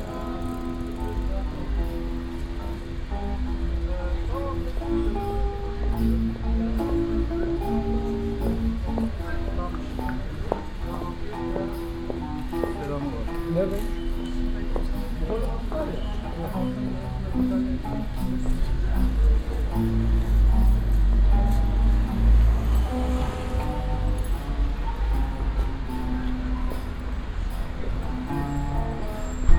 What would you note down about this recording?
In Colognes noble boutique shopping street an old street musician playing blues guitar and hi hat surrounded by the daily life city noise of passengers and diverse traffic. soundmap nrw - social ambiences and topographic field recordings